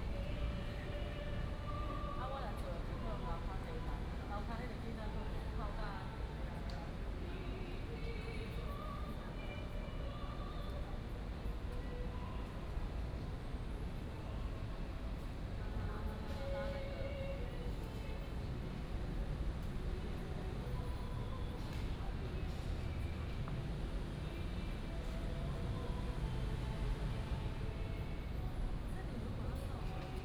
Linjiang Park, Da'an Dist. - Park at night
Park at night, Traffic noise
Da’an District, Taipei City, Taiwan, 22 June 2015